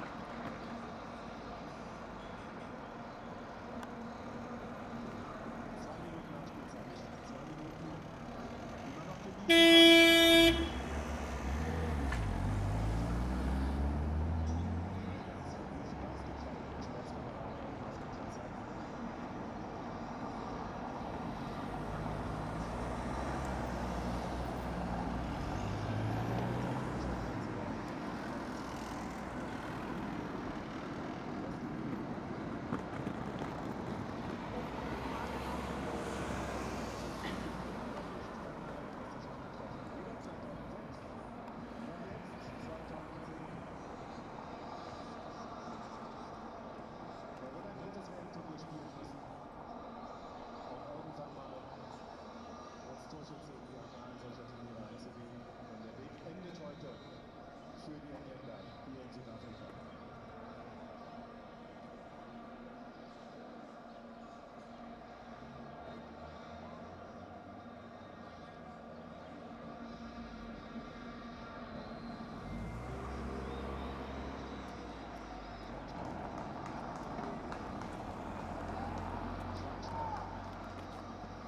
friedelstraße: hobrechtbrücke - public viewing
WM world championship 2010. people watching tv in the streets. germany wins 4:1 over england.
Berlin, Germany, 27 June